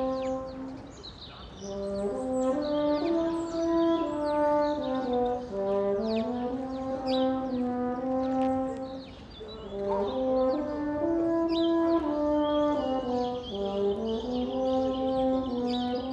Cottastraße, Berlin, Germany - Horn concert for the residential home; player in the garden, residents on their balconies.
Horn concert for those living in this residential home and their carers. The weather was beautiful. The player played from the front garden and the residents came onto their balconies to listen. I guess this would have happened inside but for the Covid-19 restrictions. But it meant those passing in the street could appreciate it too.